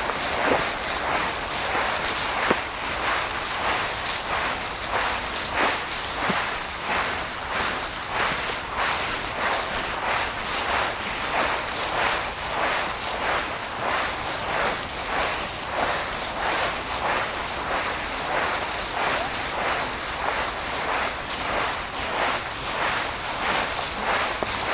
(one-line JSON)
{"title": "La Hulpe, Belgique - Dead leaves", "date": "2015-11-07 15:30:00", "description": "Walking in a huge carpet of dead leave, in a marvellous forest.", "latitude": "50.74", "longitude": "4.46", "altitude": "90", "timezone": "Europe/Brussels"}